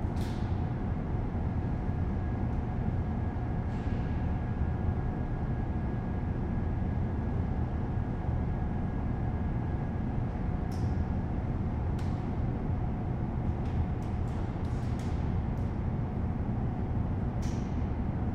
Calgary city hall passage
sound of the bridge on the +15 walkway Calgary